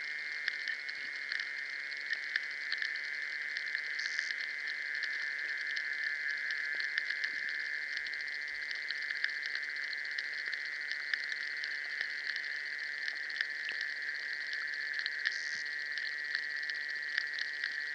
hydrophones in the Duburys lake